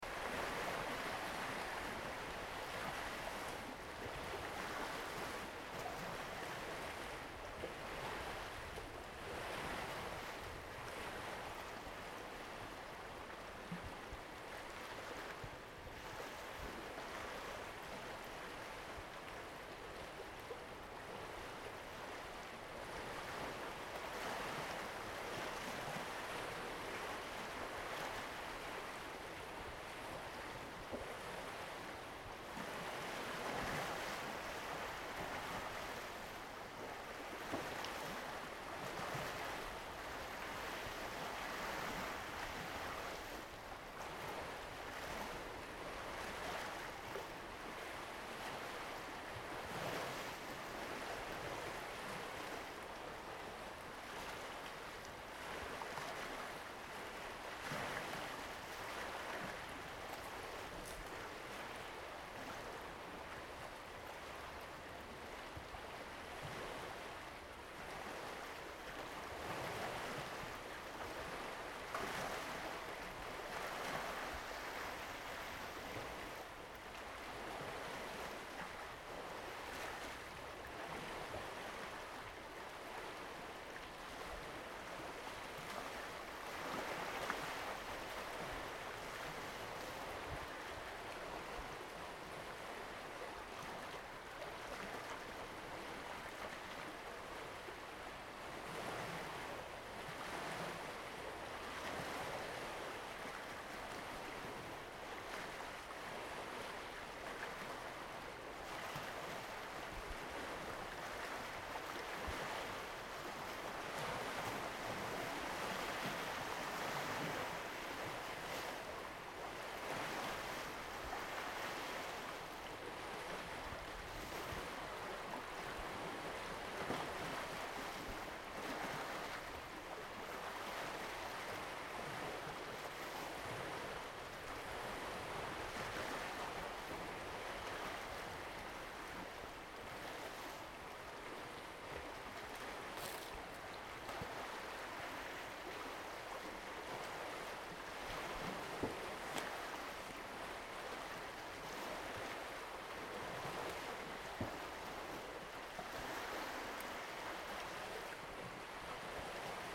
waves, wind, some bird sounds. warm winter day.
recorded with H2n, 2CH, handheld
Svealand, Sverige, 19 January 2020